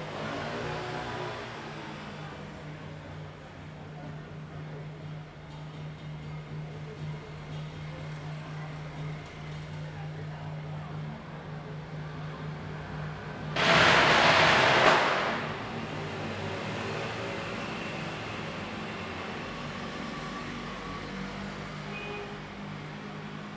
大仁街, Tamsui District - Traditional festival parade
Traditional festival parade
Zoom H2n MS+XY